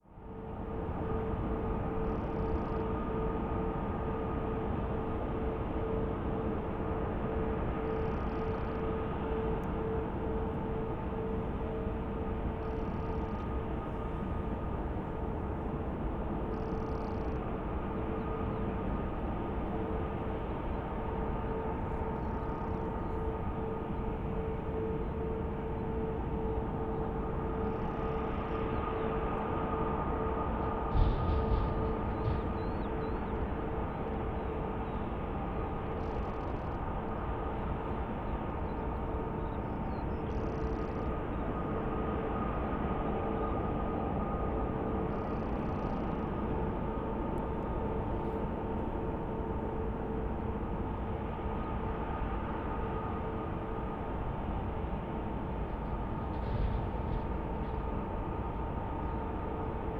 Pesch, Erkelenz, Garzweiler II - sounds from the pit
Garzweiler II, lignite mining, drones from within the pit, recorded at the western boundary (as of april 2012, things change quickly here)
(tech: SD702, Audio Technica BP4025)